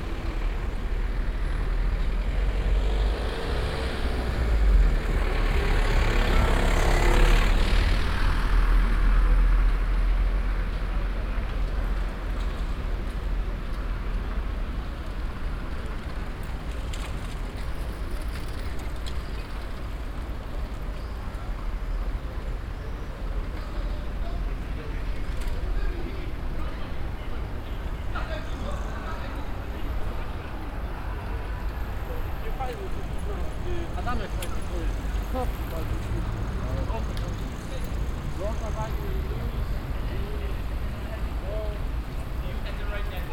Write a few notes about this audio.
on abridge across the prinsengracht channel, traffic and people on the street. a boat crossing the bridge. international city scapes - social ambiences and topographic field recordings